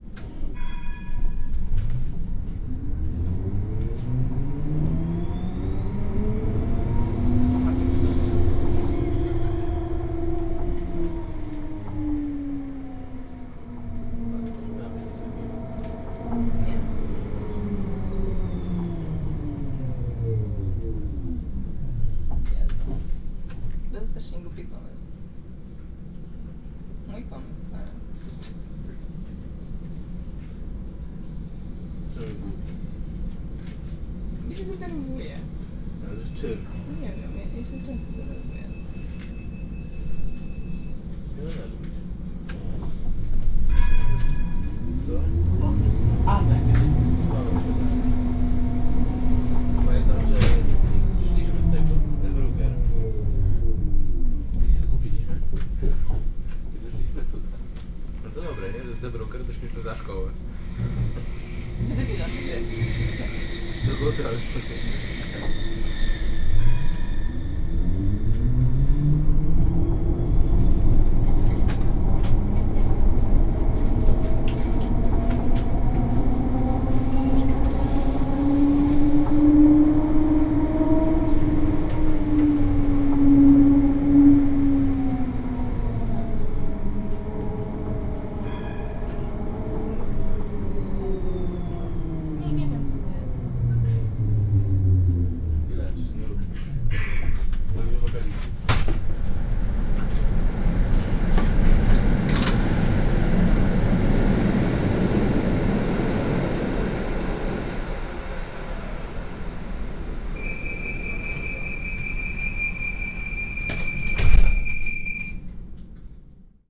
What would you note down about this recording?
Tram 4 between Porte de Hal and Gare du Midi, Polish or Russian people speaking.